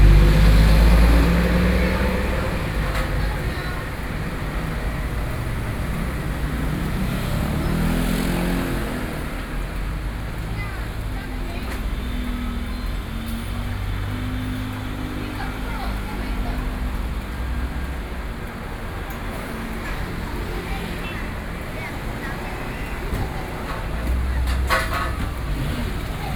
Shida Rd., Da’an Dist., Taipei City - SoundWalk
Daan District, Taipei City, Taiwan, 7 December